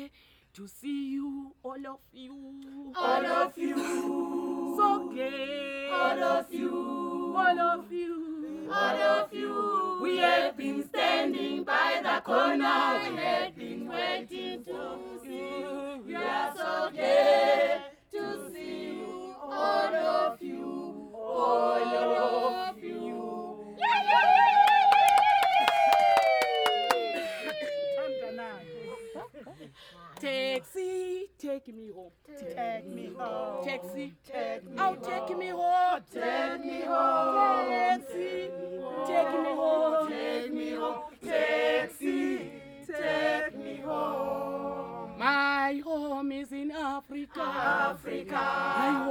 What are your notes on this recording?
two good-bye songs : “we are so happy to see you…” and, “taxi man, take me home…!”, You can find the entire list of recordings from that day archived here: